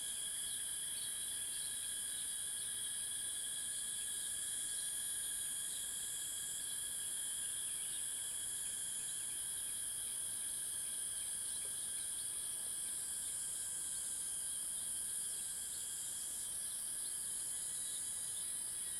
Cicada sounds, Birds called, early morning
Zoom H2n MS+XY +Spatial audio
28 July 2016, 05:23